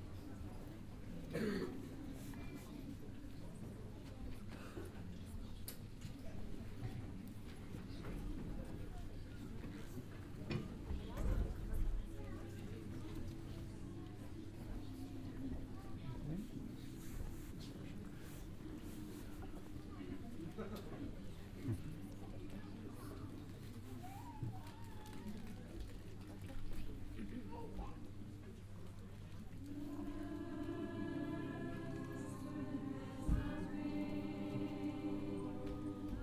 first performance of the cologne based filmhaus choir conducted by guido preuss - recording 02
soundmap nrw - social ambiences and topographic field recordings
Nordrhein-Westfalen, Deutschland, European Union